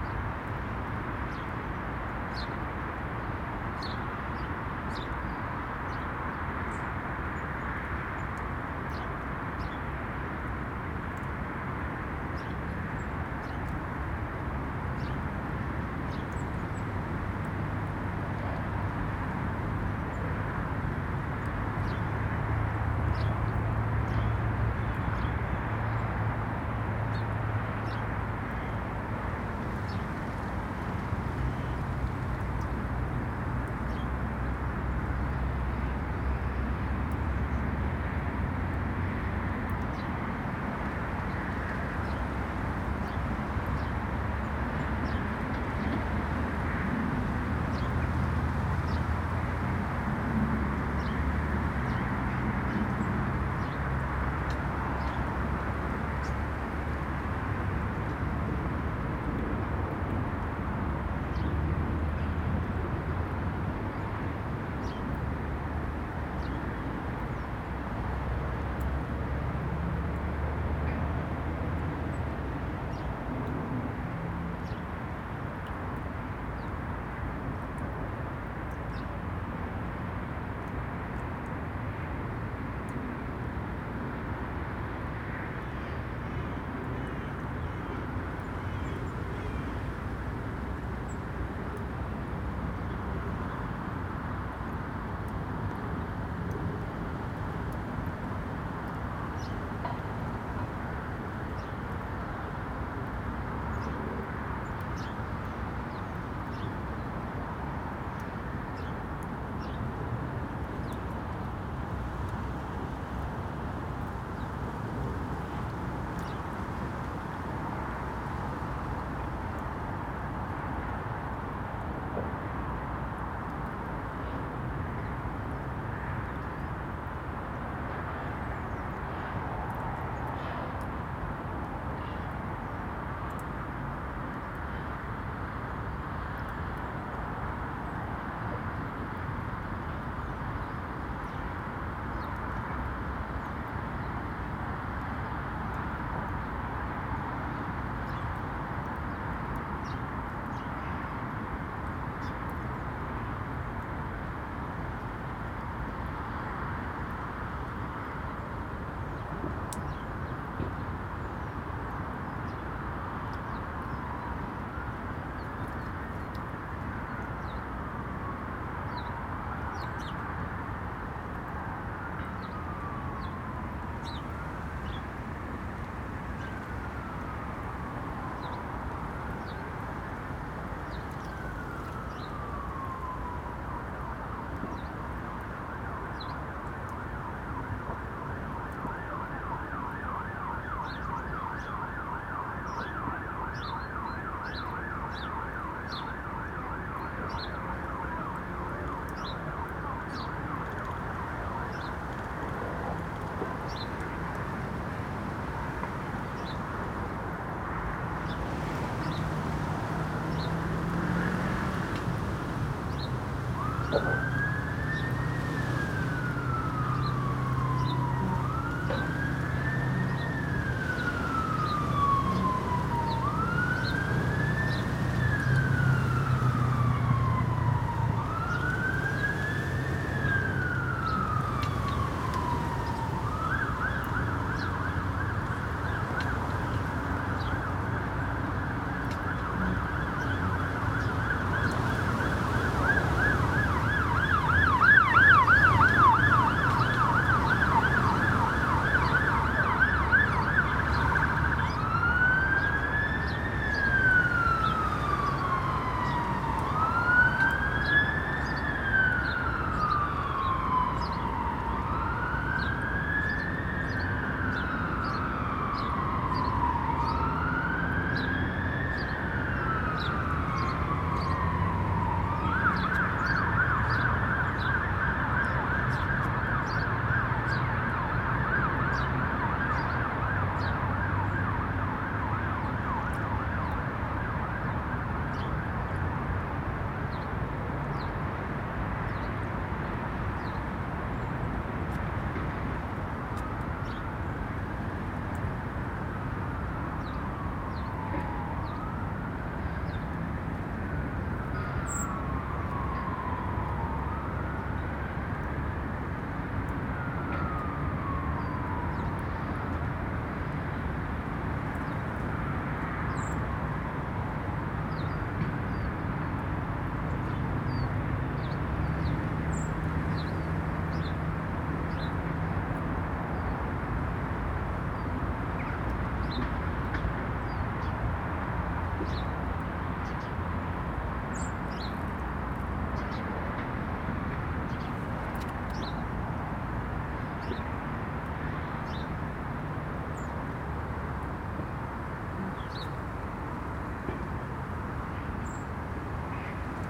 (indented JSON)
{
  "title": "Contención Island Day 17 inner southeast - Walking to the sounds of Contención Island Day 17 Thursday January 21st",
  "date": "2021-01-21 10:04:00",
  "description": "The Poplars High Street Little Moor allotments\nIn the lee of a large bush\nand in the company of house sparrows\nTwo sets of feeders\ndunnocks blackbirds and blue tits\nMelting snow\nwater dripping wherever I look",
  "latitude": "55.00",
  "longitude": "-1.61",
  "altitude": "64",
  "timezone": "Europe/London"
}